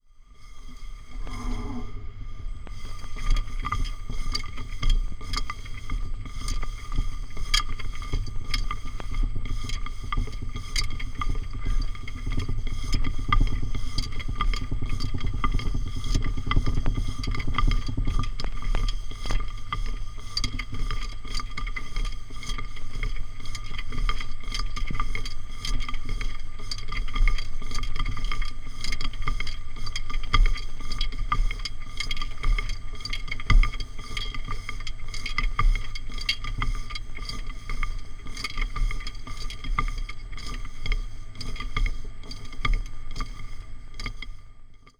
berlin, ohlauer str., waschsalon - normal washing program
normal washing operation, contact mic recording
Berlin, Germany